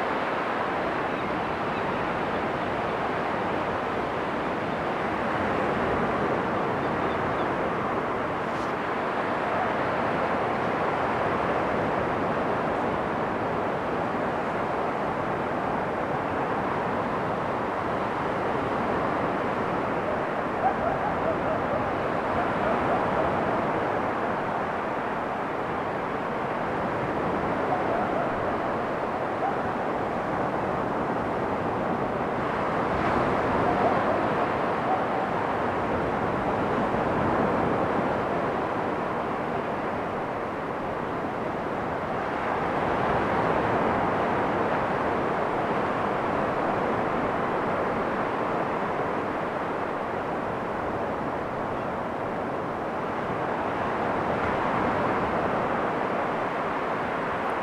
Tintagel, UK - Bossiney beach
Sitting on the beach with a Tascam DR40x. Busy doin' nuffin!